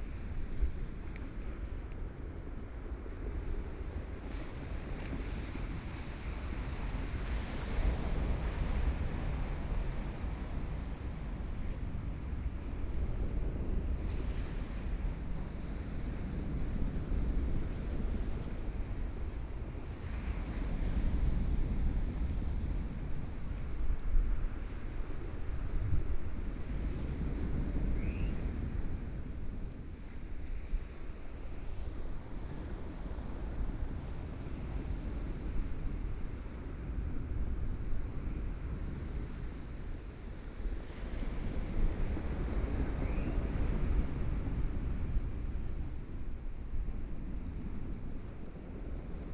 南田村, Daren Township - Sound of the waves
Sound of the waves
Taitung County, Taiwan, 5 September 2014, 15:34